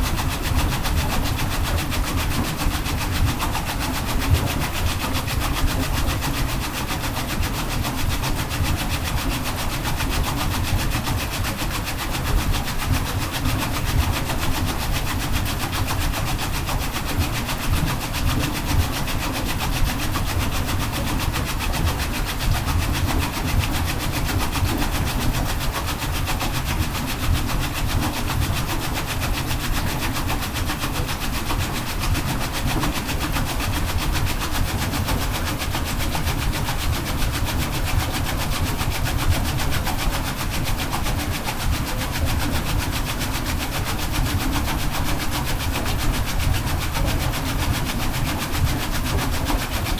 This is the sound of the waterwheel that used to power the mill. I am not sure if the National Woollen Museum was always a working wool factory, but many factories in the UK which would have historically produced wool would have taken some power from the rivers, and so this sound is an industrial sound, inexorably connected with historic textile production.